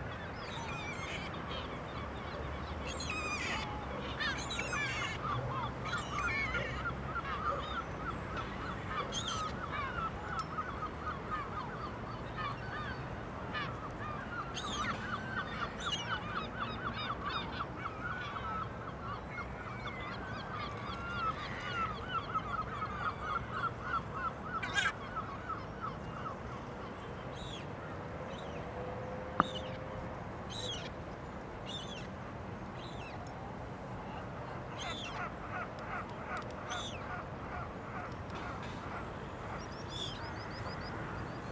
Afurada, Vila Nova de Gaia, Portugal - Estuario do Douro
Estuario do Douro. Mapa Sonoro do Rio Douro. Douros estuary. Douro River Sound Map.